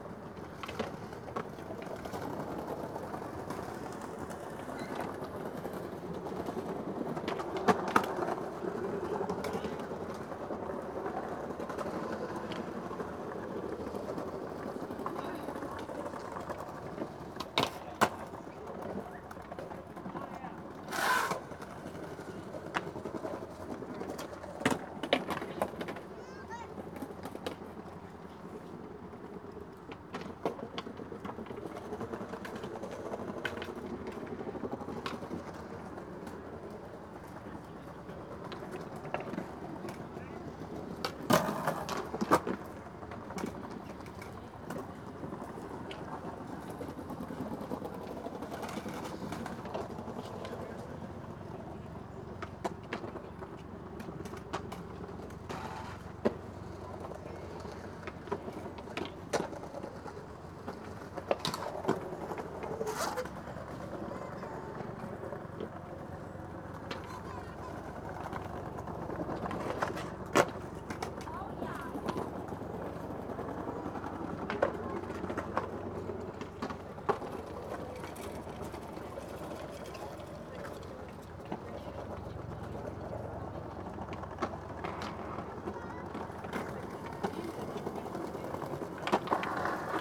Sunday afternoon, former Tempelhof airport, skaters practising
(Sony PCM D50)
Germany, 20 October 2019